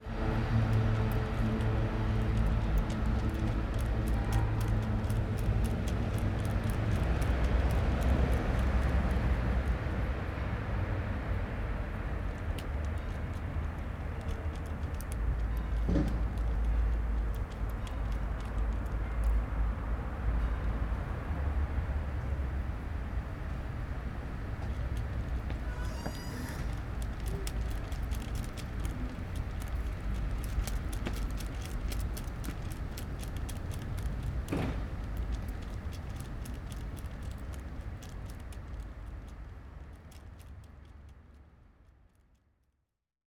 Ackerstraße, Mitte, Berlin, Deutschland - Ackerstraße, Berlin - at night, sticky tape flapping in the wind
Ackerstraße, Berlin - at night, sticky tape flapping in the wind. [I used an MD recorder with binaural microphones Soundman OKM II AVPOP A3]
Berlin, Germany, 13 January 2006